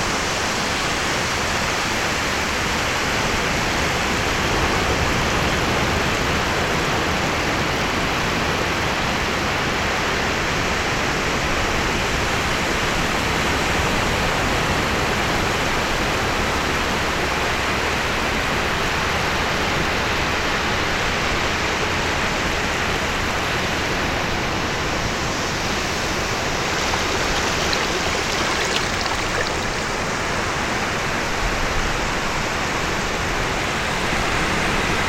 {
  "date": "2006-09-08 12:56:00",
  "description": "Morocco, Mirleft, Sidi Moulay Abdellah beach, Atlantic Ocean",
  "latitude": "29.57",
  "longitude": "-10.05",
  "altitude": "1",
  "timezone": "Africa/Casablanca"
}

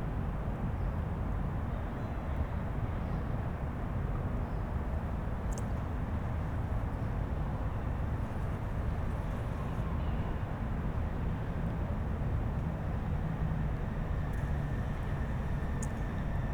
{
  "title": "Punto Franco Nord, Trieste, Italy - ambience, a few drops, mosquitos",
  "date": "2013-09-08 15:30:00",
  "description": "atmosphere with dripping water and mosquitos\n(SD702, AT BP4025)",
  "latitude": "45.66",
  "longitude": "13.77",
  "altitude": "7",
  "timezone": "Europe/Rome"
}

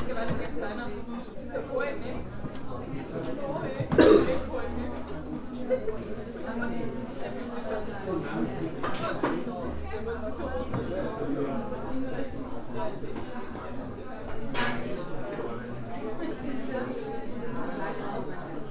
{
  "title": "Linz, Österreich - café bar walker",
  "date": "2015-01-06 00:55:00",
  "description": "café bar walker, hauptplatz 21, 4020 linz",
  "latitude": "48.31",
  "longitude": "14.29",
  "altitude": "274",
  "timezone": "Europe/Vienna"
}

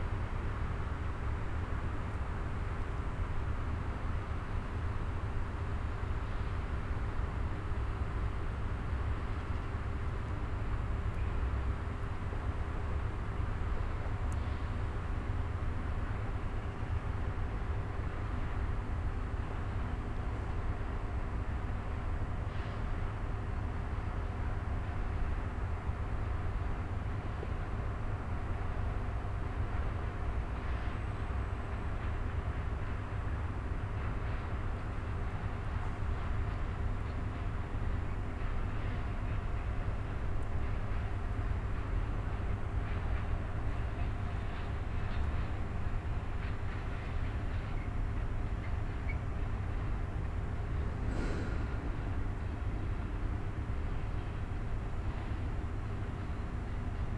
{
  "title": "Hutnicza, Siemianowice Śląskie, Polen - former ironworks area, distant city ambience",
  "date": "2018-10-19 10:55:00",
  "description": "ambience heard on the debris of former Huta Laura (Laurahütte) ironworks plant, in front of one of the remaining buildings.\n(Sony PCM D50, DPA4060)",
  "latitude": "50.30",
  "longitude": "19.03",
  "altitude": "278",
  "timezone": "Europe/Warsaw"
}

